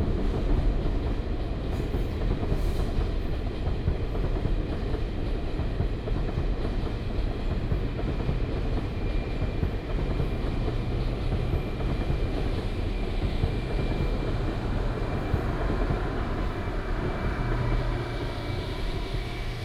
Construction sound, Bird sound, On the river bank, Train passing, Dog barking, Garbage truck arrives, traffic sound
Binaural recordings, Sony PCM D100+ Soundman OKM II